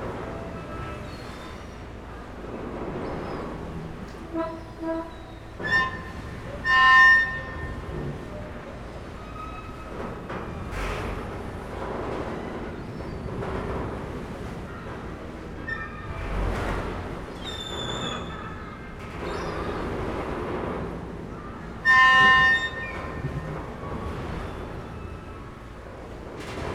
Cais Gás, Lisboa, Portugal - Creaking pontoon
Ferry pontoon creaking with the waves on the Tagus river. Recorded with Zoom H5 and the standard XYH-5 stereo head (XY 90° configuration).